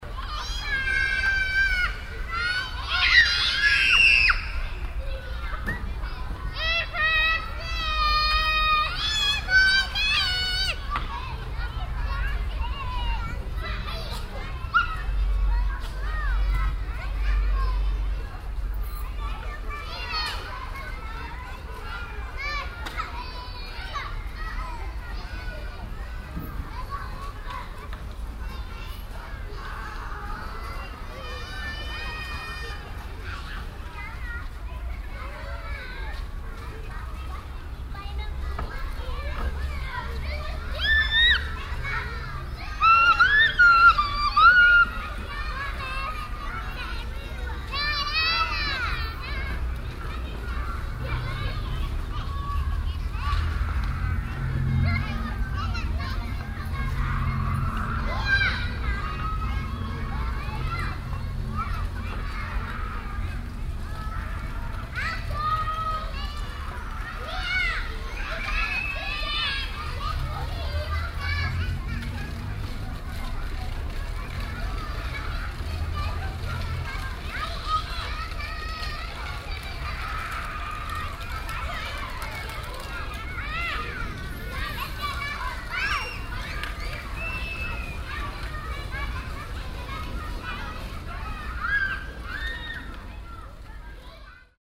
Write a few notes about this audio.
soundmap: cologne/ nrw, atmo trude herr platz, morgens, spielende kinder vom kindergarten zugweg, project: social ambiences/ listen to the people - in & outdoor nearfield recordings